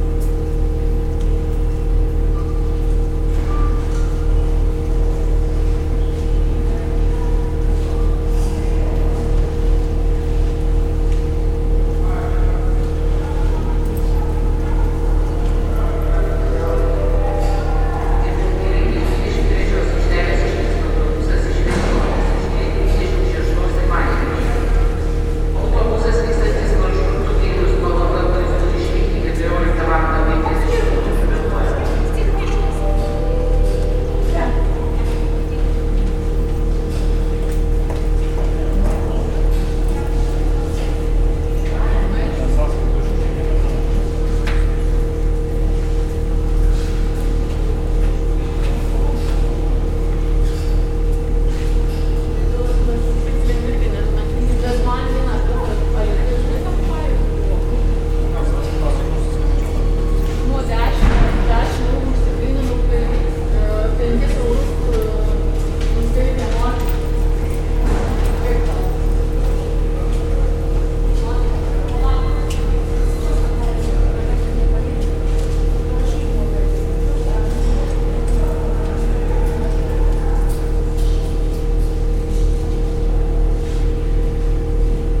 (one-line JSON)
{"title": "Vilnius Bus Station, Geležinkelio g., Vilnius, Lithuania - Bus station waiting hall, near an air conditioner unit", "date": "2019-07-19 12:00:00", "description": "A composite recording. Reverberating waiting hall is captured with stereo microphones, and nearby air conditioner hum is captured with dual contact microphones. Recorded with ZOOM H5.", "latitude": "54.67", "longitude": "25.28", "altitude": "140", "timezone": "Europe/Vilnius"}